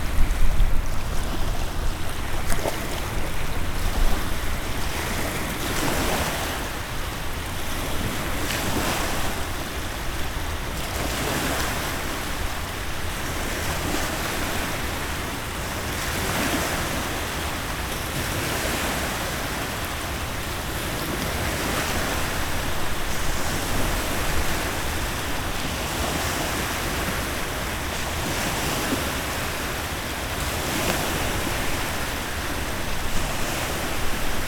Wake builds as tanker passes, New Orleans, Louisiana - Building Wake
CA-14(quasi binaural) > Tascam DR100 MK2
New Orleans, LA, USA